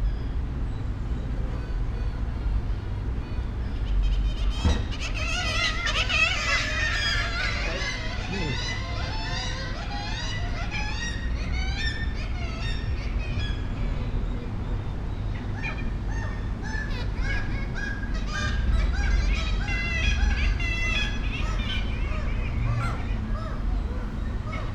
St Nicholas Cliff, Scarborough, UK - kittiwakes at the grand hotel ...
kittiwakes at the grand hotel ... kittiwake colony on the ledges and window sills at the back of the hotel ... SASS to Zoom H5 ... bird calls from herring gull ... jackdaw ... blue tit ... goldfinch ... background noise ... air conditioning ... traffic ... the scarborough cliff tramway ... voices ... a dog arrived at one point ... 20:12 two birds continue their squabble from a ledge and spiral down through the air ...
Yorkshire and the Humber, England, UK, 20 June 2019, 09:50